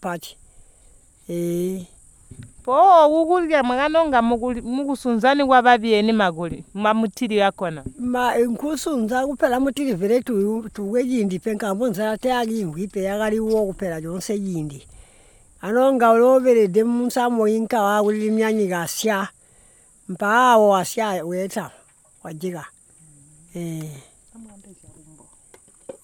Manjolo, Binga, Zimbabwe - Banene, how did you use to cook Mutili...
Duve Mufuari, an elder of Jumbue village, responds to Margaret’s questions about bush fruits and how they used to cook and prepare them. She also sings a song.
a recording from the radio project "Women documenting women stories" with Zubo Trust, a women’s organization in Binga Zimbabwe bringing women together for self-empowerment.